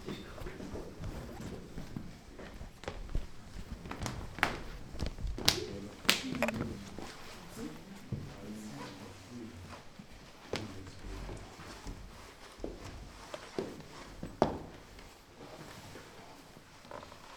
{"title": "niederheimbach: burg sooneck - sooneck castle tour 3", "date": "2010-10-17 16:25:00", "description": "guided tour through sooneck castle (3), visitors on the spiral stairs to the first floor, different rooms, guide continues the tour\nthe city, the country & me: october 17, 2010", "latitude": "50.02", "longitude": "7.82", "altitude": "203", "timezone": "Europe/Berlin"}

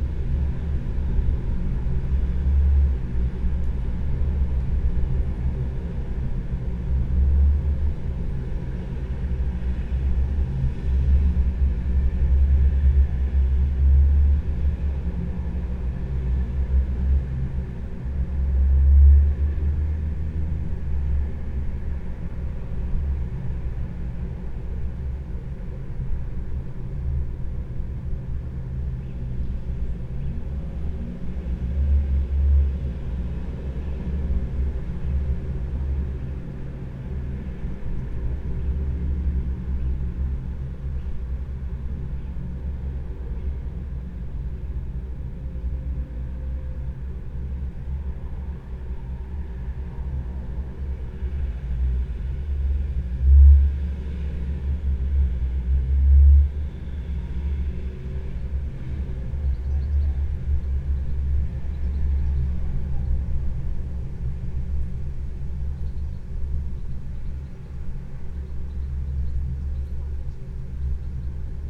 {"title": "Utena, Lithuania, in metallic tube", "date": "2013-07-18 14:10:00", "description": "some metallic tube (a gas torch) and how the city sounds in it...deep resonances of traffic", "latitude": "55.51", "longitude": "25.60", "altitude": "107", "timezone": "Europe/Vilnius"}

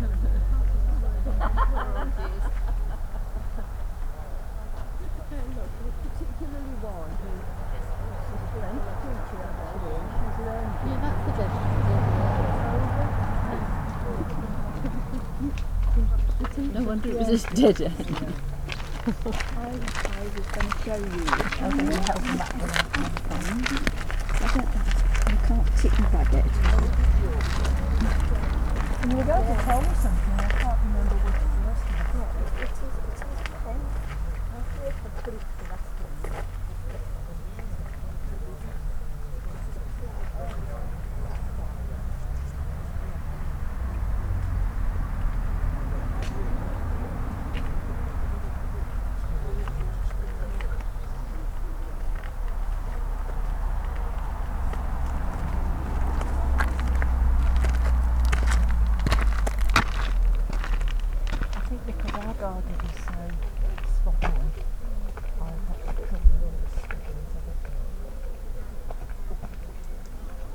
{"title": "Hidcote Manor Gardens, Chipping Campden, Gloucestershire, UK - Gardens", "date": "2018-07-11 15:56:00", "description": "The recorder is on the ground in a rucksack with the mics attached. It is close by a gravel path where many people pass. Beyond are ornamental gardens. Behind is a road to the car park.\nI have found sometimes when the surface is good placing the mics on the ground gives a semi boundary mic effect.\nMixPre 3 with 2 x Rode NT5s", "latitude": "52.09", "longitude": "-1.74", "altitude": "196", "timezone": "Europe/London"}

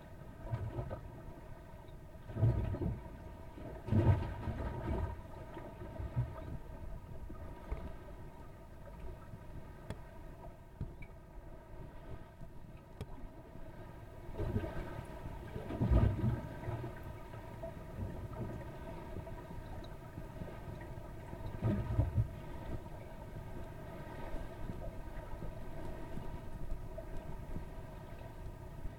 {"title": "Nørgårdvej, Struer, Danimarca - Water sound from a plastic pipe", "date": "2022-09-30 17:30:00", "latitude": "56.48", "longitude": "8.61", "altitude": "1", "timezone": "Europe/Copenhagen"}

